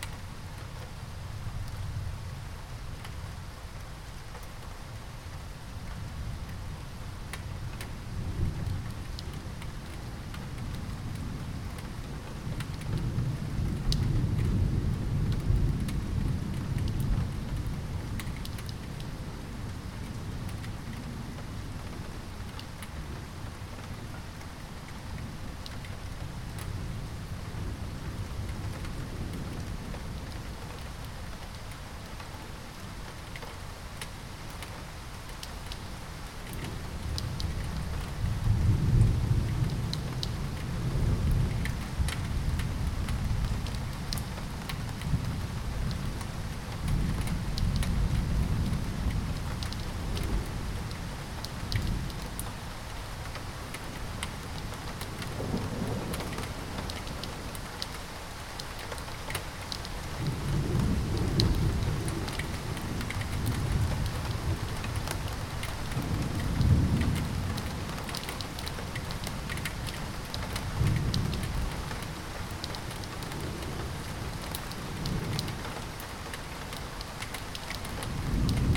Moabit, Berlin, Germany - Moabit gets struck by lightning
Beside the lightning that struck this quartiers backyard while a thunderstorm passes Berlin, you hear the permanent rumbling sound of thunder from far away and rain noises with increasing volume
1 July 2012